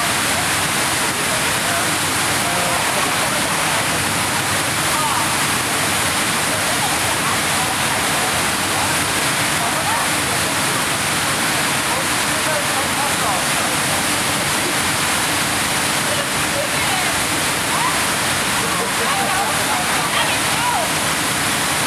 Zeche Zollverein, Essen, Deutschland - essen, zeche zollverein, schacht XII, water tower installation
Am Schacht XII - der Klang von Besuchern und einem Wasser Turm - einer temporären Installation von rAndom International zur Ausstellung "urbane Künste" 2013. Eine Aufnahme freundlicherweise für das Projekt Stadtklang//:: Hörorte zur Verfügung gestellt von Hendrik K.G. Sigl
At Schacht XII- the sound of a water tower and visitors - at a temporary installation by rAndom International presented at "urban arts" in 2013
you can watch a video documentation of the object here:
Projekt - Stadtklang//: Hörorte - topographic field recordings and social ambiences